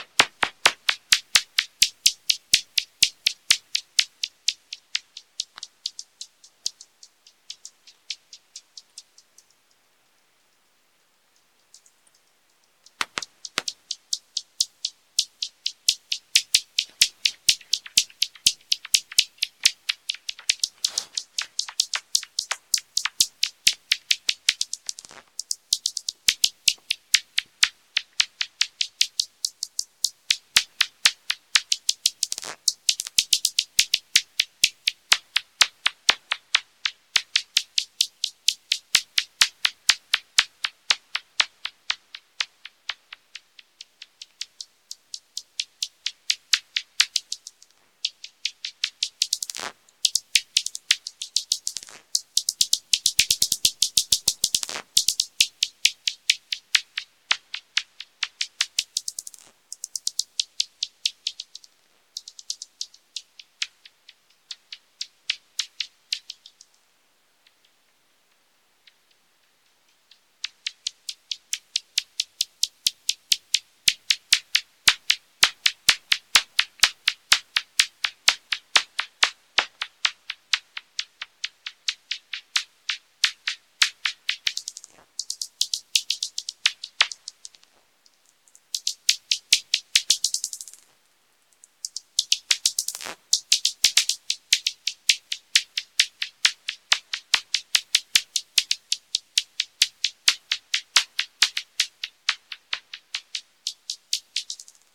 Utena, Lithuania, bats echolocating
bats echolocating in the park of the town
18 April 2019, 22:10